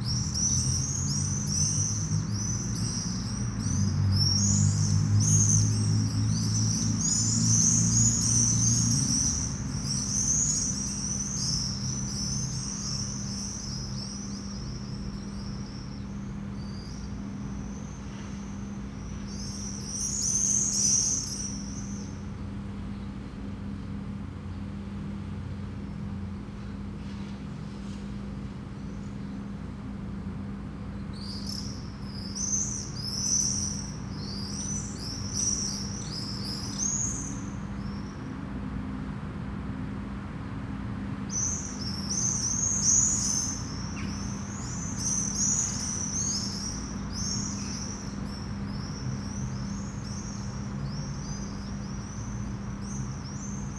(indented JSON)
{
  "title": "Natural History Museum, Tartu, summer morning with swifts",
  "date": "2010-06-30 07:05:00",
  "description": "swifts, cars, mowing machine",
  "latitude": "58.37",
  "longitude": "26.72",
  "altitude": "67",
  "timezone": "Europe/Tallinn"
}